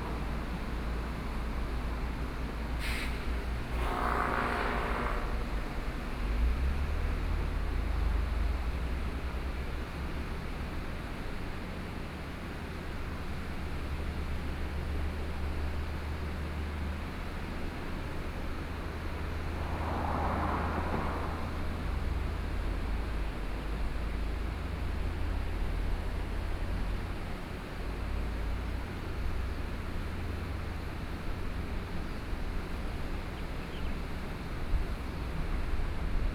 Sec., Yuanshan Rd., Yuanshan Township - At the roadside
Stream after Typhoon, Traffic Sound, At the roadside
Sony PCM D50+ Soundman OKM II
Yilan County, Taiwan